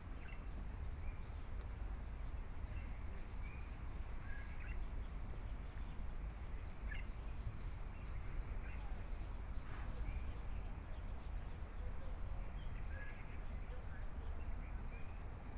Xinsheng Park - Taipei EXPO Park - walking in the Park
walking in the Park, Birds singing, Aircraft flying through, Traffic Sound, Binaural recordings, Zoom H4n+ Soundman OKM II
Zhongshan District, 新生公園, 15 February, ~15:00